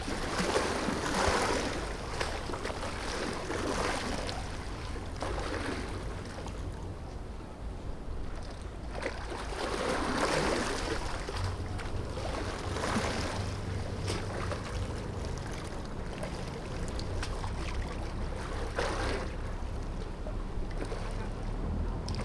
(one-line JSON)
{"title": "岐頭村, Baisha Township - Waves and tides", "date": "2014-10-22 11:22:00", "description": "Sound of the waves, Small beach, Tide\nZoom H6 +Rode NT4", "latitude": "23.65", "longitude": "119.61", "altitude": "3", "timezone": "Asia/Taipei"}